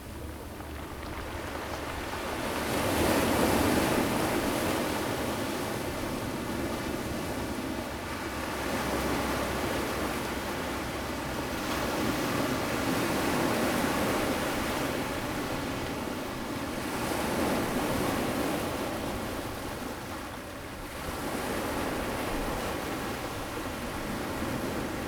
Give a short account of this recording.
Sound of the waves, Zoom H2n MS+XY